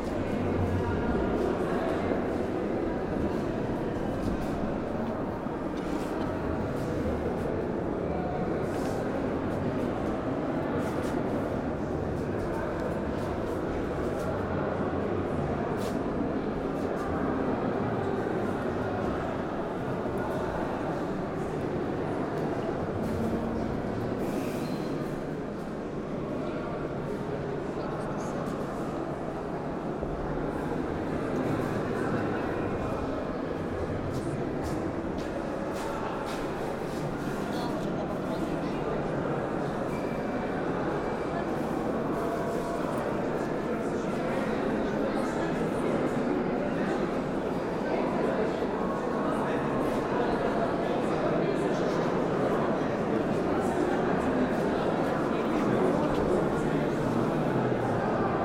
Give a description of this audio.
opening of an art exhibition, walk through huge open empty space with few wall barriers